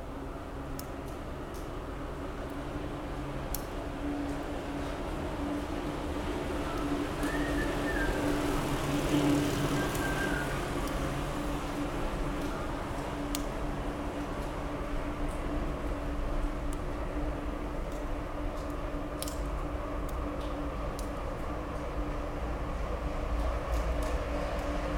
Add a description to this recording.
cyclists on Bristol to Bath trail riding through an old rail tunnel